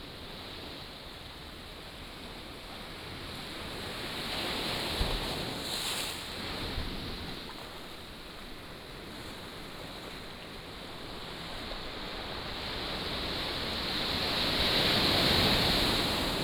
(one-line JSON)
{"title": "梅石村, Nangan Township - Sound of the waves", "date": "2014-10-14 14:59:00", "description": "In front of the small temple, Sound of the waves", "latitude": "26.15", "longitude": "119.94", "altitude": "92", "timezone": "Asia/Taipei"}